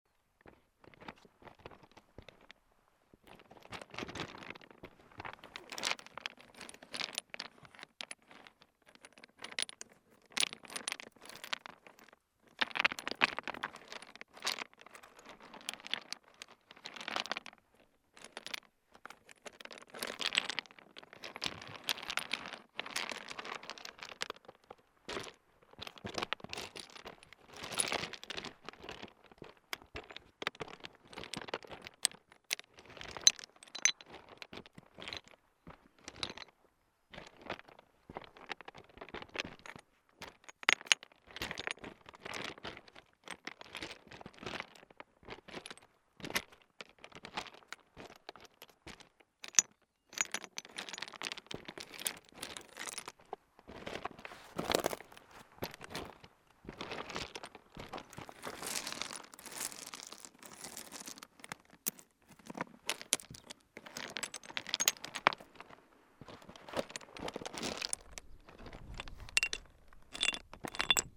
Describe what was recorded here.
The stones in this very desertic place are special. As I said the the Lozere mounts, where stones creechs, you won't find this elsewhere. In fact here in this huge limestone land, the stones sing. It makes a sound like a piano, with shrill sounds and acidulous music note. That's what I wanted to show with stones here and that's not very easy. These stones are called "lauzes".